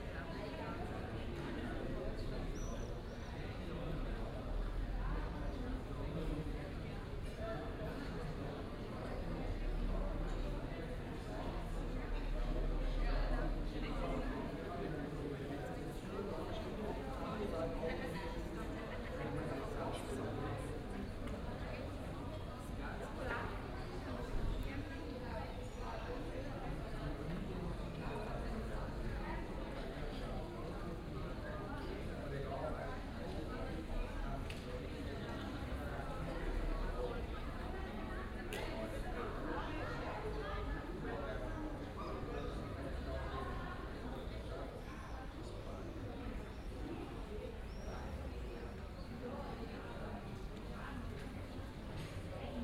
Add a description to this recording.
Still the same evening stroll, place in front of the church, the church bells toll a quarter to nine. In front of the 'Garage' people are chatting.